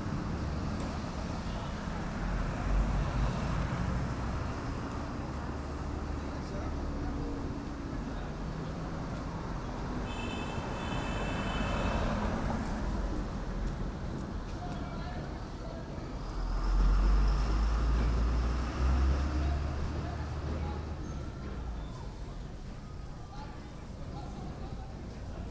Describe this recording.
Guanyua junction on a Saturday morning. Busses criss-crossing the county–and island–interchange on the side of the street here. Recorded on a Sony PCM-M10 with build-in microphones.